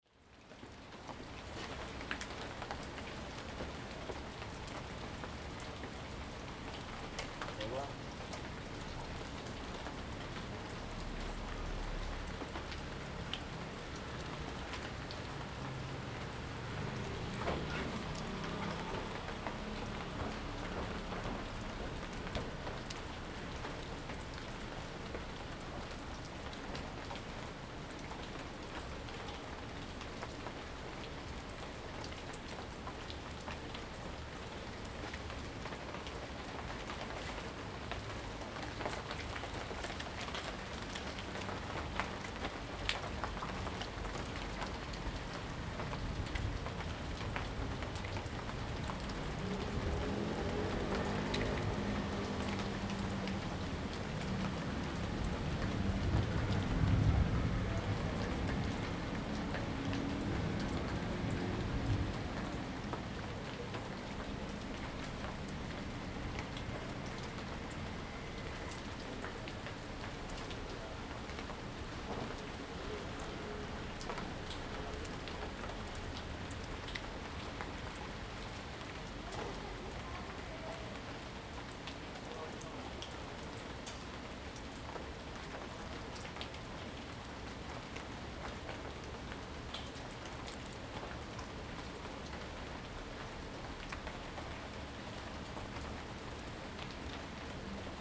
{
  "title": "富陽自然生態公園, Taipei City - Rainy Day",
  "date": "2015-07-04 18:21:00",
  "description": "In the park, Abandoned military passageway entrance, Rainy Day\nZoom H2n MS+XY",
  "latitude": "25.02",
  "longitude": "121.56",
  "altitude": "24",
  "timezone": "Asia/Taipei"
}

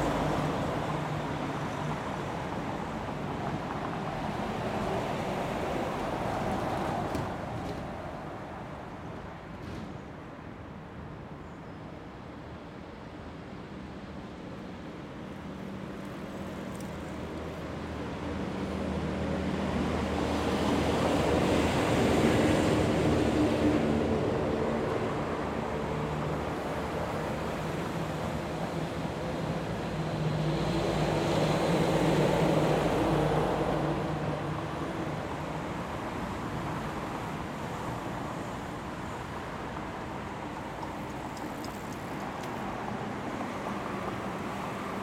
{
  "title": "Chau. de Vilvorde, Bruxelles, Belgique - Devant le canal...",
  "date": "2022-06-30 07:45:00",
  "description": "Devant le canal avec les voitures.",
  "latitude": "50.88",
  "longitude": "4.36",
  "altitude": "14",
  "timezone": "Europe/Brussels"
}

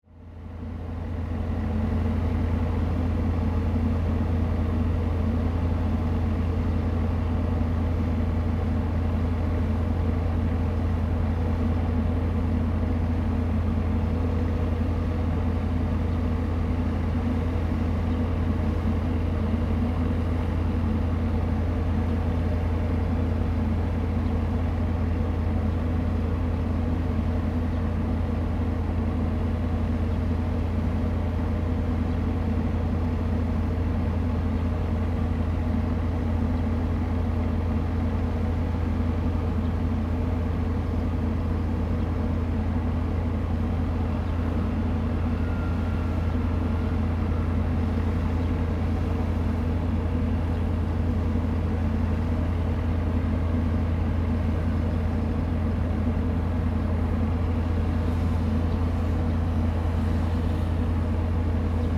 {
  "title": "大福漁港, Hsiao Liouciou Island - In the fishing port",
  "date": "2014-11-01 15:22:00",
  "description": "In the fishing port, Birds singing\nZoom H2n MS +XY",
  "latitude": "22.33",
  "longitude": "120.37",
  "altitude": "5",
  "timezone": "Asia/Taipei"
}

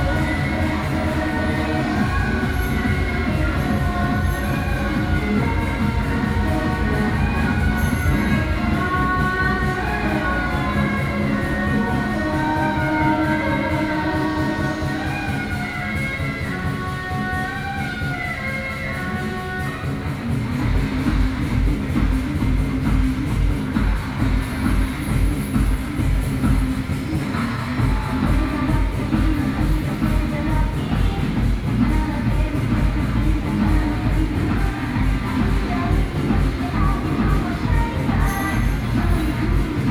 {
  "title": "Beitou - Traditional temple festivals",
  "date": "2013-03-03 11:18:00",
  "description": "Traditional temple festivals, Gong, Traditional musical instruments, Binaural recordings",
  "latitude": "25.14",
  "longitude": "121.49",
  "altitude": "23",
  "timezone": "Asia/Taipei"
}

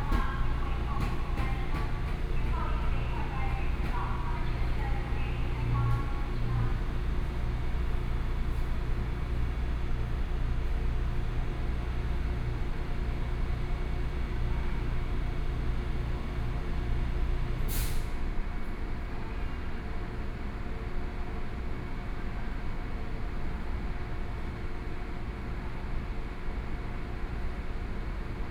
{
  "title": "Jiaosi Township, Yilan County - Local Train",
  "date": "2013-11-07 13:50:00",
  "description": "from Yilan Station to Jiaoxi Station, Binaural recordings, Zoom H4n+ Soundman OKM II",
  "latitude": "24.80",
  "longitude": "121.77",
  "altitude": "5",
  "timezone": "Asia/Taipei"
}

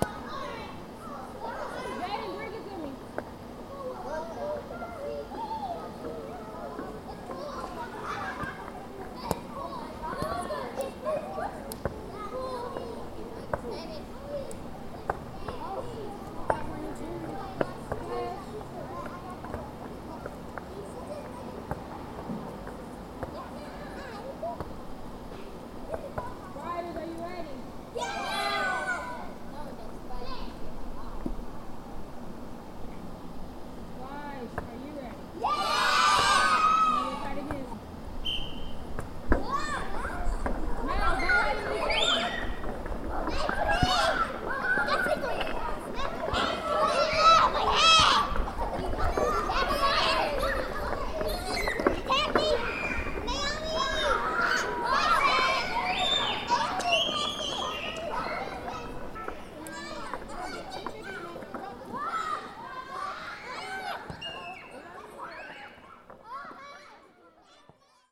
{
  "title": "Bolton Hill, Baltimore, MD, USA - children playing",
  "date": "2016-09-12 13:10:00",
  "description": "Recorded outside of an elementary school playground during recess. Recorder was a Tascam DR-40 using the built-in stereo microphones.",
  "latitude": "39.31",
  "longitude": "-76.62",
  "altitude": "40",
  "timezone": "America/New_York"
}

